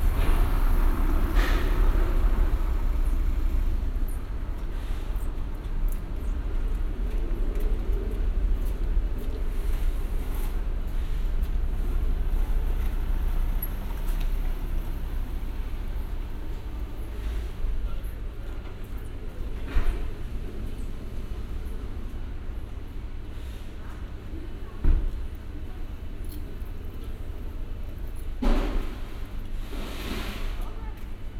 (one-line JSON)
{"title": "Saint-Gilles, Belgium - Frozen Food", "date": "2012-12-17 14:40:00", "description": "Frozen Food Trade in Belgradostreet\nBinaural Recording", "latitude": "50.83", "longitude": "4.33", "altitude": "23", "timezone": "Europe/Brussels"}